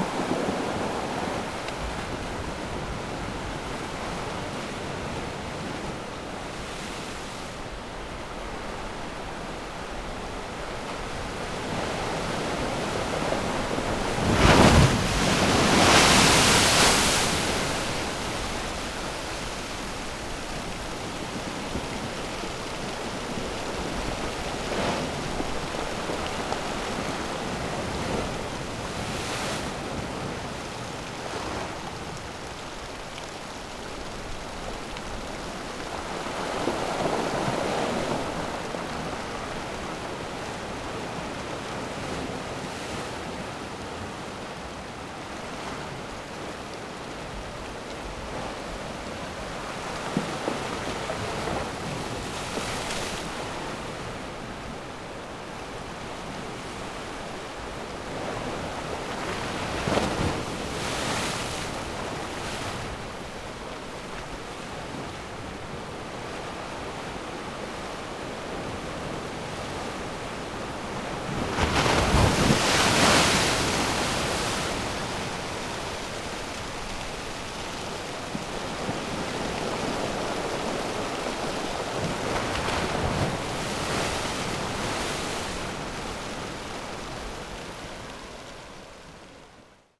Funchal, hotel district, pier - wave punch
strong waves pushed into a corner, slamming into a concrete wall and rising a few meters above the pier.